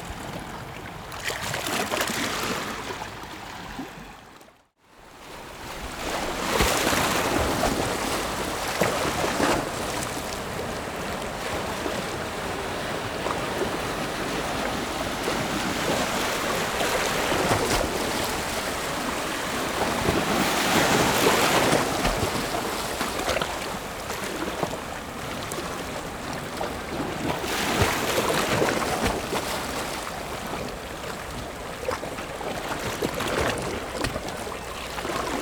Shimen, New Taipei City - The sound of the waves
桃園縣 (Taoyuan County), 中華民國, 25 June 2012, 15:33